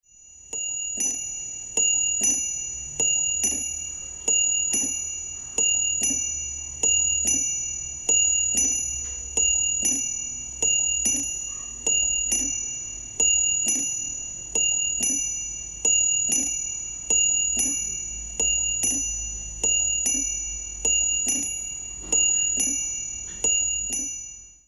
bonifazius, bürknerstr. - tischuhr, groß
18.11.2008 19:49
tischuhr, mittelgroß, tickt / clock, medium size, ticking